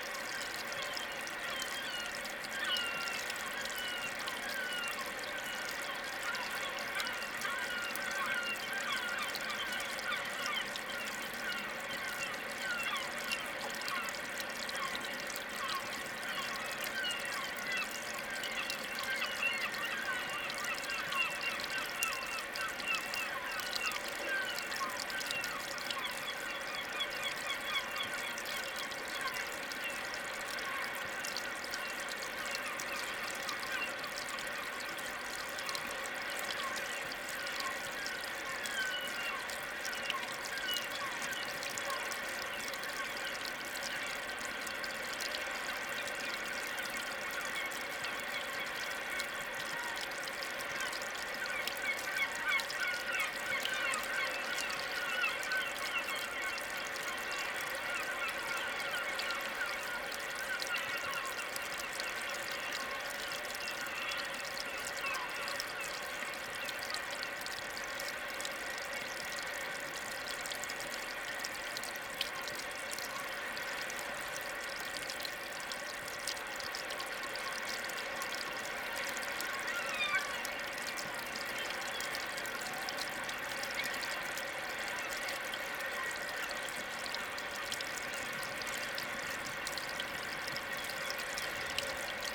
The recording consists of the layering of two soundscapes “In Situ”. The sound of the source of the Douro river, and a recording of the mouth of the river, between the cities of Porto and Vila Nova de Gaia, diffused on location through a pair of portable speakers.
I then recorded both soundscapes using two Oktava mk 012 microphones into a Sound Devices Mix pre 3.